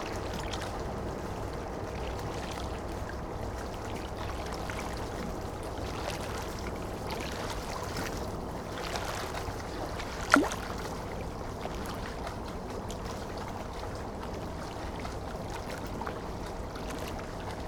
Neckarwestheim, Deutschland - LEHAR
Frachtschiff LEHAR auf dem Neckar - Talwärts
PCM-D50